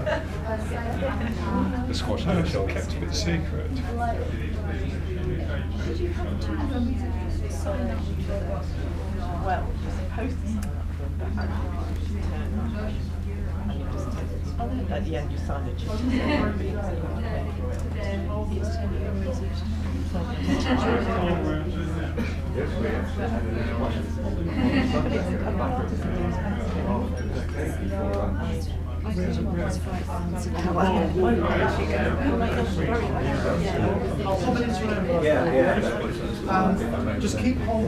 Art Gallery on the Atlantic Ocean - Voices
Voices heard during an art event in the gallery of a liner during an Atlantic crossing.. MixPre 3, 2 x Beyer Lavaliers.